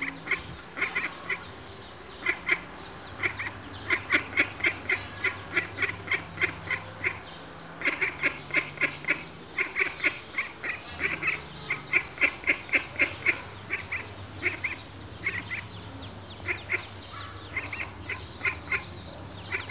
a duck with thirteen ducklings walking them around the courtyard of an order. quacking with each other constantly.